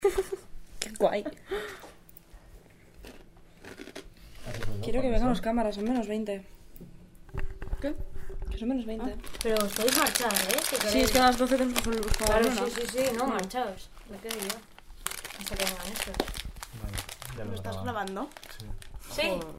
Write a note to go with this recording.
girls from Navarre waiting for the cameras in audiovisual section of the faculty of fine arts. featuring: chaskis!!